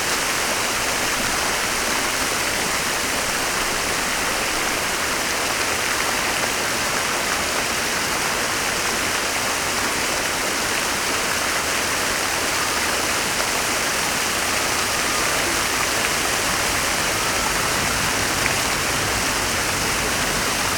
Paris, fontaine Rotonde de la Villette
Fontaine place de la Rotonde de la Villette - Paris, 10eme (Jaurès)